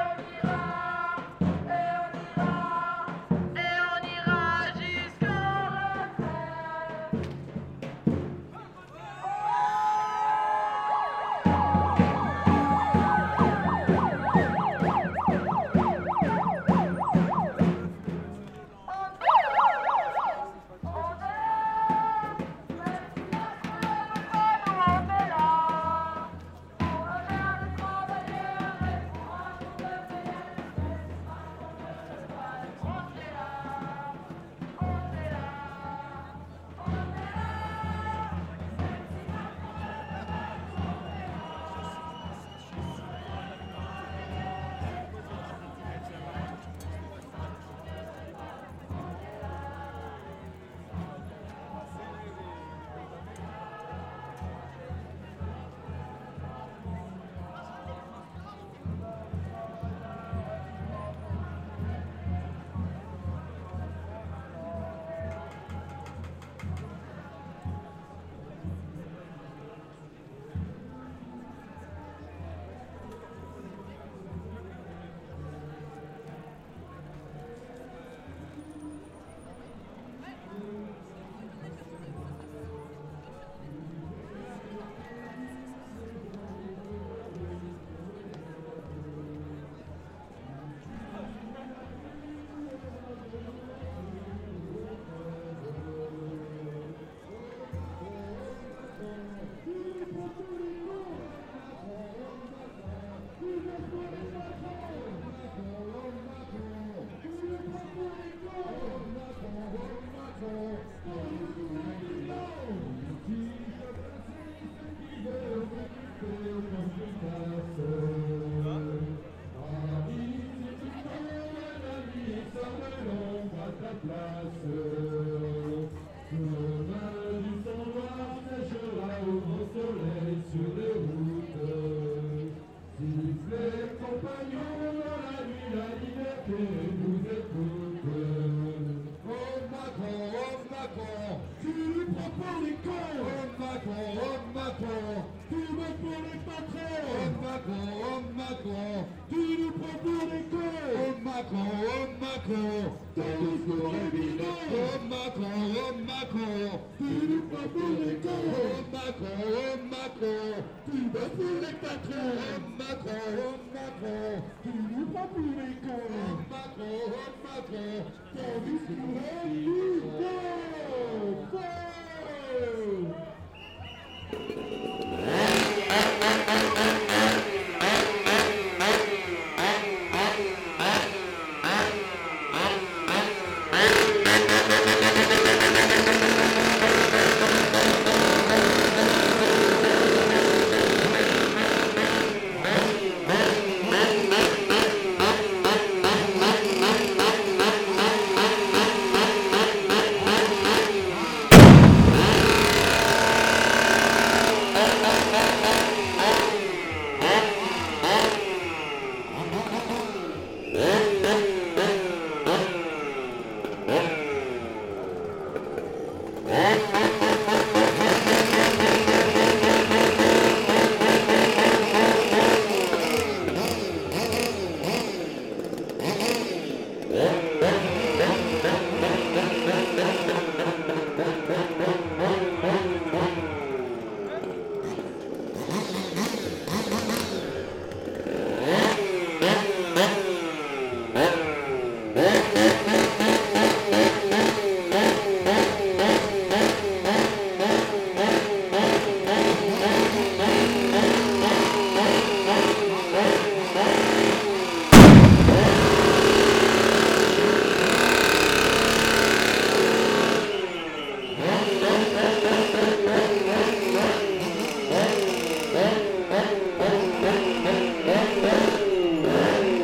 Quai des Pêcheurs, Strasbourg, Frankreich - demo 6.02.2020 réforme des retraites strasbourg
manifestation contre le r´forme des retraits in strasbourg, screaming singing, explosion
(zoom h6)
6 February, ~17:00